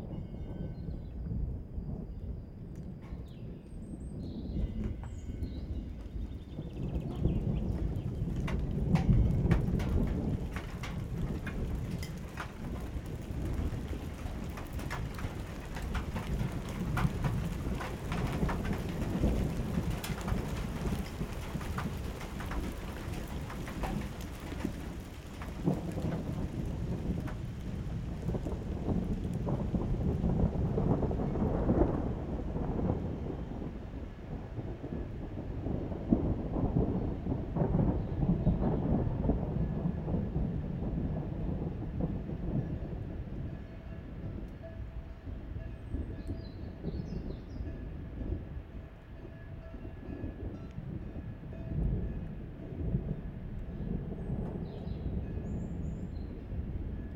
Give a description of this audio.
Donnergrollen, kurzer Hagelschauer, Gebimmel von Kuhglocken, das Gewitter zieht ab. Kein Regen.